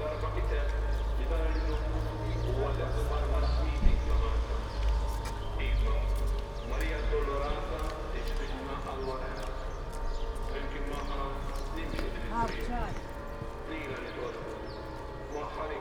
Triq San Girgor, Żejtun, Malta - prayer and procession in the streets
sound of prayer during a procession, amplified by many permanent speakers in the strests.
(SD702, DPA4060)
Iż-Żejtun, Malta, 7 April